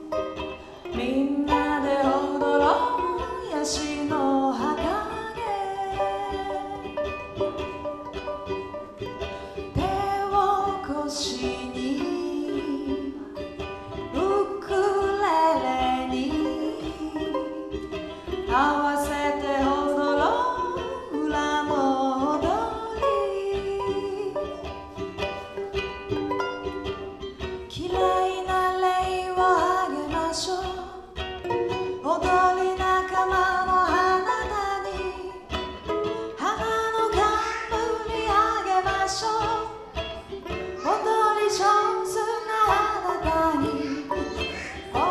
rapideyemovies köln - private coconami concert
10.01.2009 16:40 house music at rapideyemovies, well known distributor of asian movies: coconami = two japanese stranded in munich germany.
coconami has left rock'n'roll behind and simply wants to please, in a pure and simple way. two ukuleles, one vocalist.
Köln, Germany, 10 January 2009